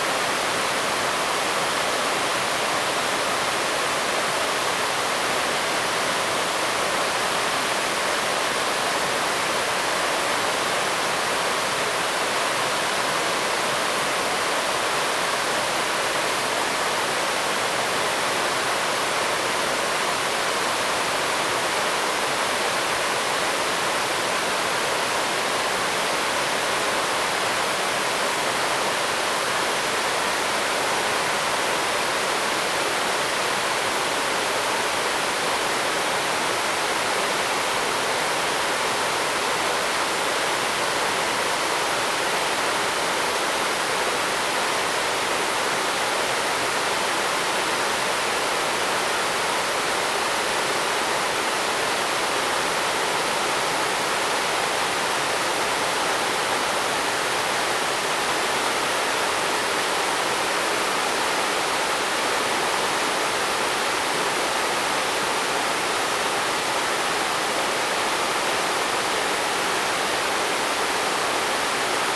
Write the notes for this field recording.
Waterfalls and stream, Zoom H2n MS+ XY